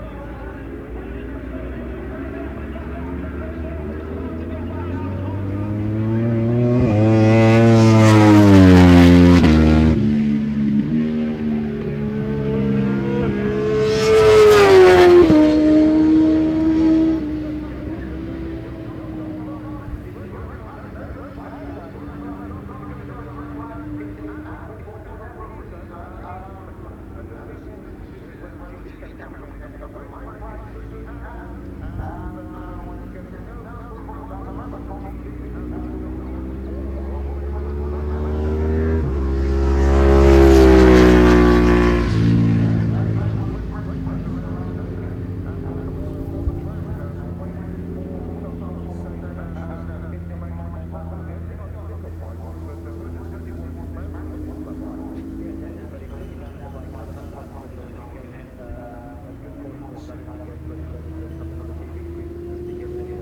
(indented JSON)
{
  "title": "Silverstone Circuit, Towcester, UK - World Superbikes 2004 ... superbikes ...",
  "date": "2004-06-12 11:30:00",
  "description": "World Superbikes 2004 ... Qualifying ... part two ... one point stereo mic to minidisk ...",
  "latitude": "52.07",
  "longitude": "-1.02",
  "altitude": "152",
  "timezone": "GMT+1"
}